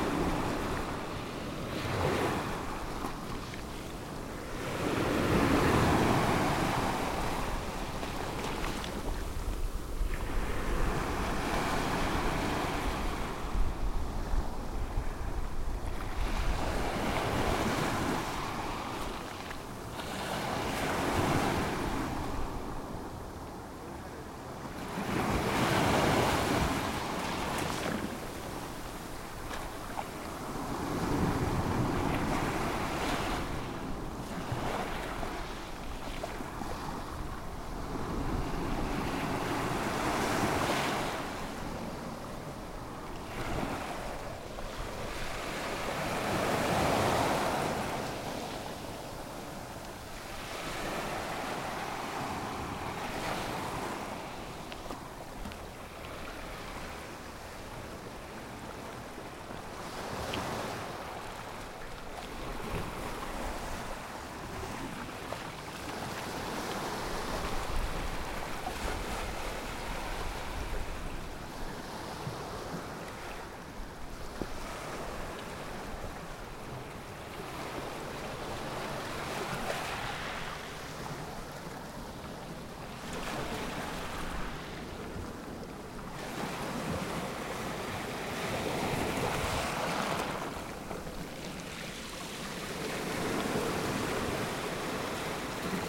pebble beach near Poseidon Café, Palaiokastrites, Greece - ocean surf on pebble beach at palaiokastrites
Recorded on the pebble beach near Poseidon Café with a Sony PCM-M10